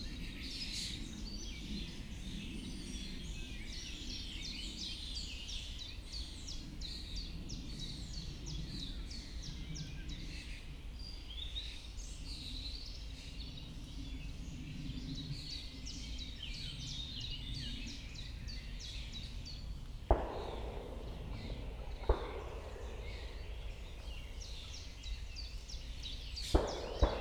Bażantarnia, Siemianowice Śląskie - forest edge, ambience
trying to catch (the sound of) a flock of escaping starlings, at the edge of Bażantarnia forest and golf resort, forest ambience
(Sony PCM D40, DPA4060)